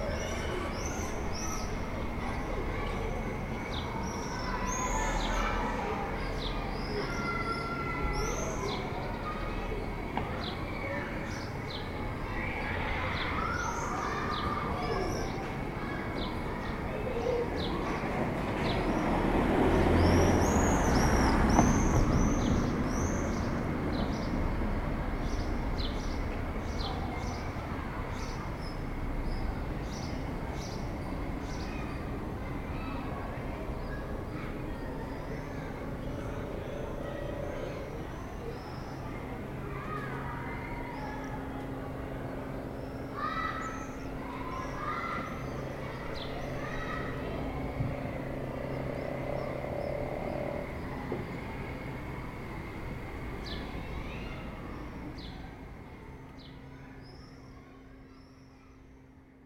Rue Vaugelas, Aix-les-Bains, France - Tutti bruyant
La fin de la récréation à l'école du Centre, les cloches de Notre Dame sonnent avant celle de l'école, les martinets crient dans le ciel, voitures de passage, retour au calme.
Auvergne-Rhône-Alpes, France métropolitaine, France, 4 July